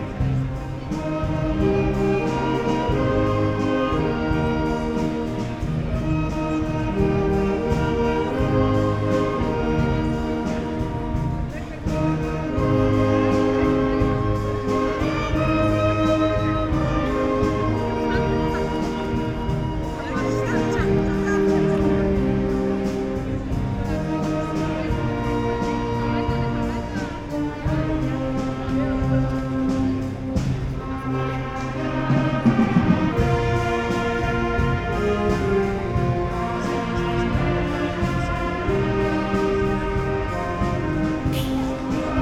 Place des Armes, Luxemburg - orchestra playing
walking away from Places des Armes, to escape The Sound of Silence...
(Olympus LS5, Primo EM172)